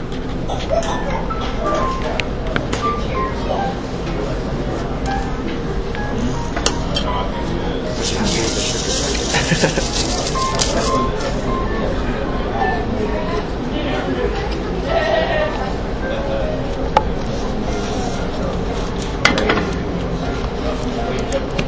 starbucks, armory square
starbucks, jazz, coffee